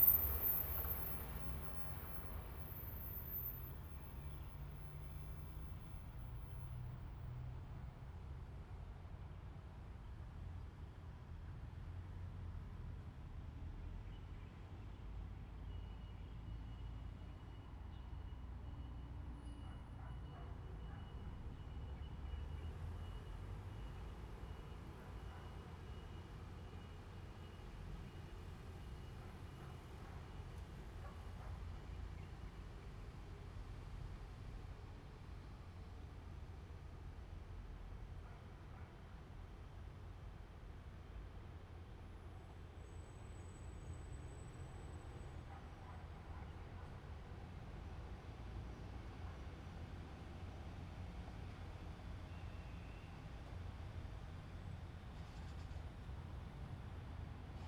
Fuqian Rd., Miaoli City - Next to the tracks
The train runs through, Next to the tracks, Bird sound, Traffic sound
Zoom H2n MS+XY +Spatial audio
Miaoli County, Taiwan, March 2017